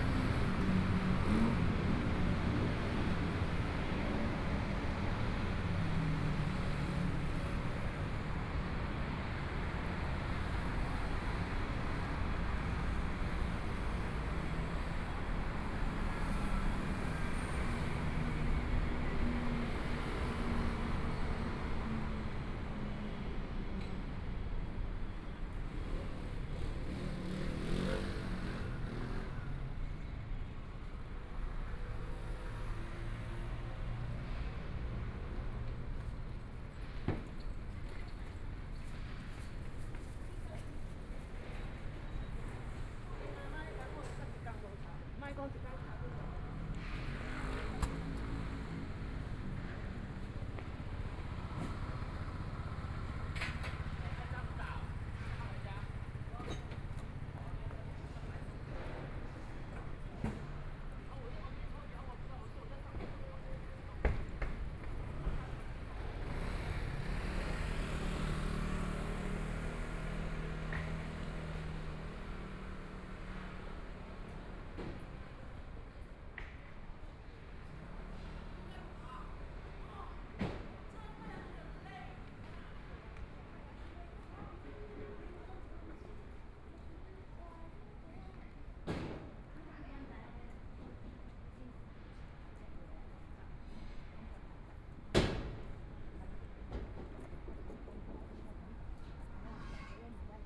台北市中山區桓安里 - Walking across the different streets
Walking across the different streets, Footsteps, Traffic Sound, Motorcycle Sound, Pedestrians, Construction site sounds, Binaural recordings, Zoom H4n+ Soundman OKM II